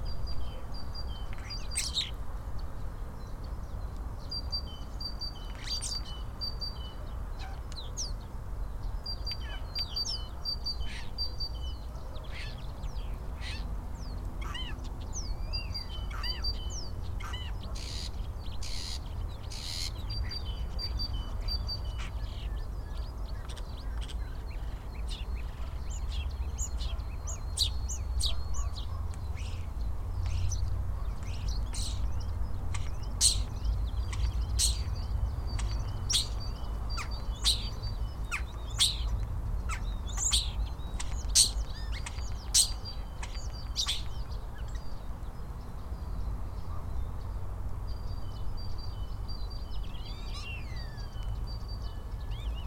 парк Изумрудный, Барнаул, Алтайский край, Россия - song of starling 09-04-2019
Starling sings, some other birds in the background, distant traffic sounds. Emerald park (парк "Изумрудный"), Barnaul.